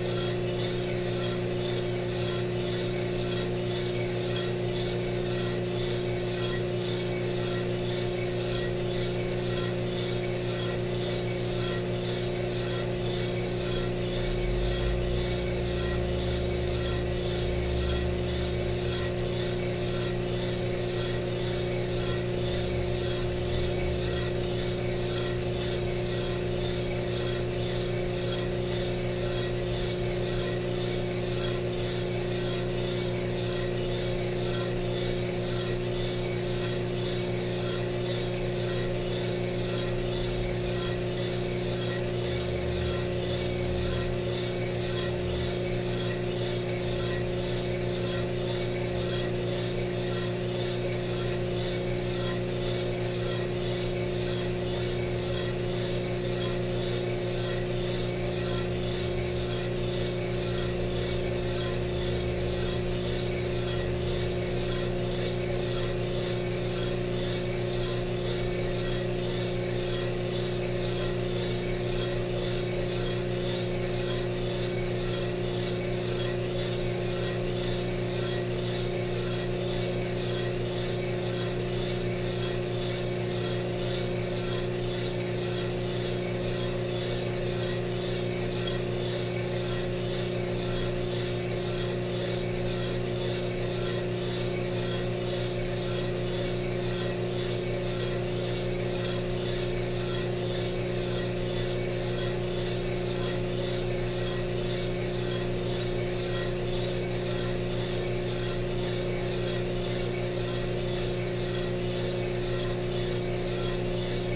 fuji royal coffee roaster
...roasting 1kg coffee beans...entire process
강원도, 대한민국, 24 March 2021, ~09:00